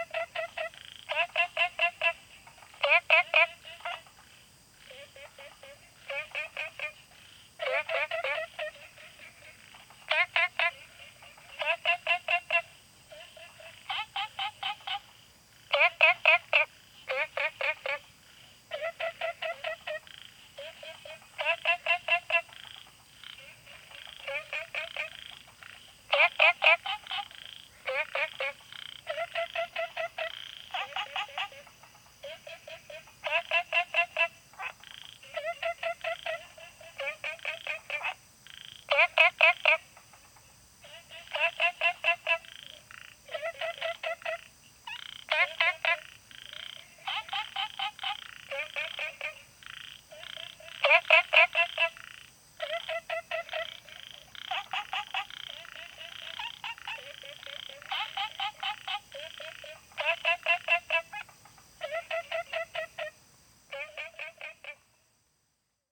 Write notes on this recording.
Frogs chirping, Insects sounds, Zoom H2n MS+ XY